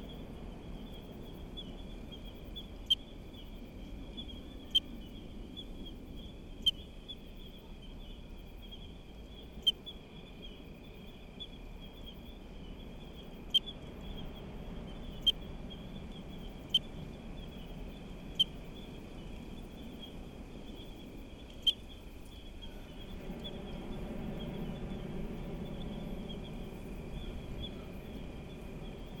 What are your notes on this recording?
Night cicadas and other creatures of the night, trees cracking on wind and the ocean nearby. Recorded with a SD mixpre6 and a pair of primos 172 in AB stereo configuration.